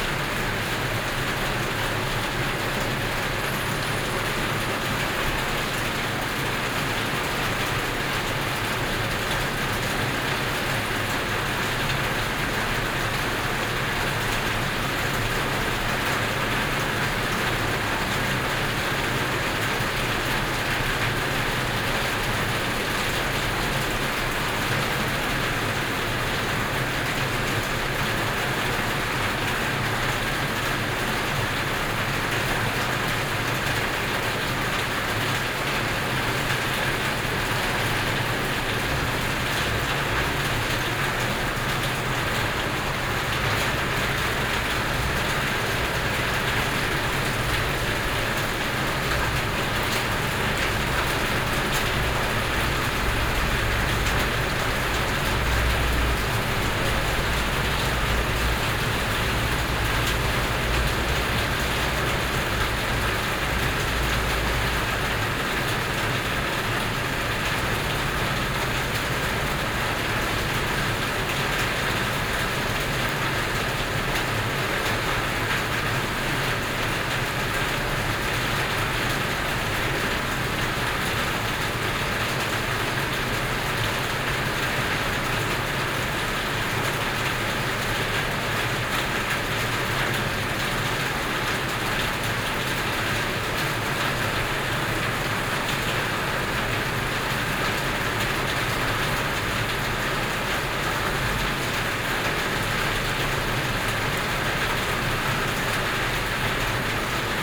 Morning at the station entrance, Binaural recordings, Zoom H4n+ Soundman OKM II
Yilan County, Taiwan, 7 November 2013